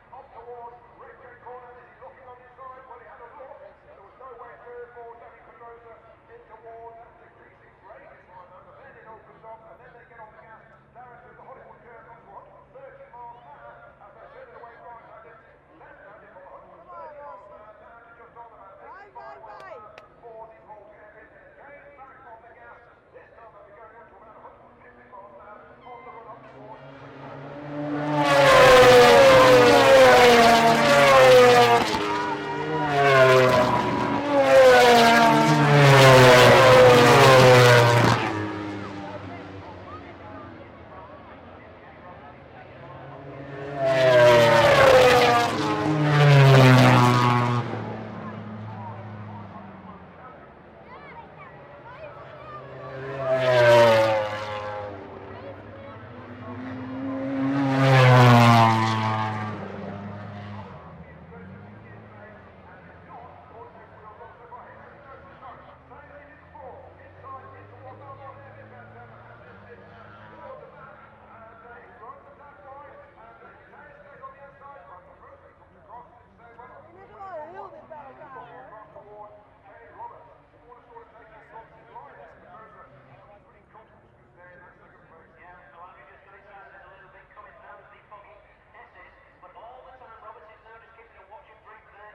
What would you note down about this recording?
British Motorcycle Grand Prix 2006 ... MotoGP race ... one point stereo mic to mini-disk ...